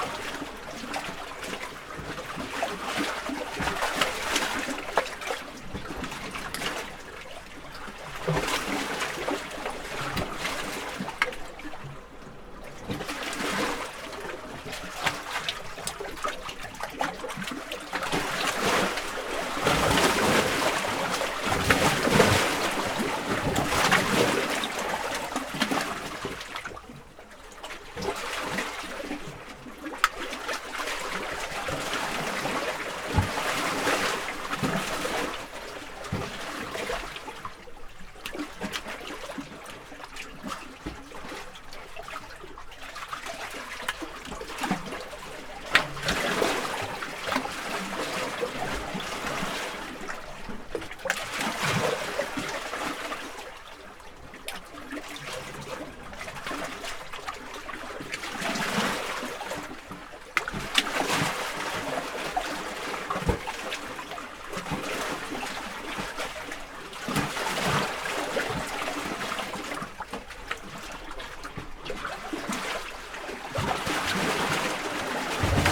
Nallikarin majakka, Oulu, Finland - Waves hitting the breakwater
Waves hitting the breakwater and going through a hole under the rocks at Nallikari beach. Recorded with Zoom H5 with default X/Y capsule. Wind rumble removed in post.
7 May 2020, ~22:00